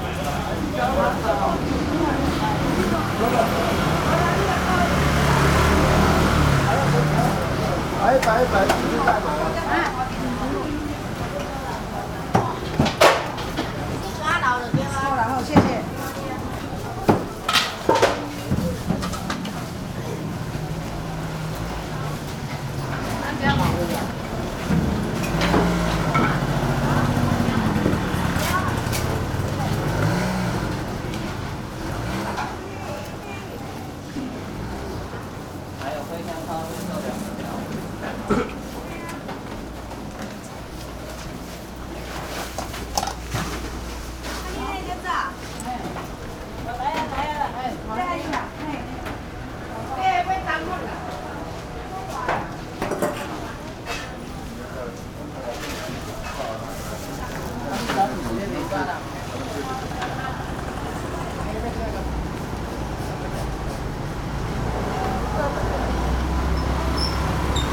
Ln., Zhongshan Rd., Tamsui Dist., New Taipei City - Traditional Market
Small alley, Traditional Market, Traffic Sound
Sony PCM D50